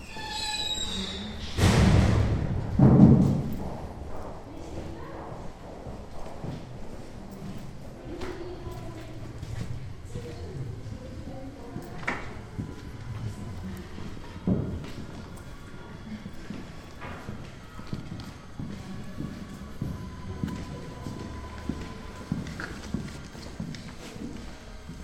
Meetfactory, and art residency place in Prague, recorded during the performance of Handa Gote troupe.
Prague, Czech Republic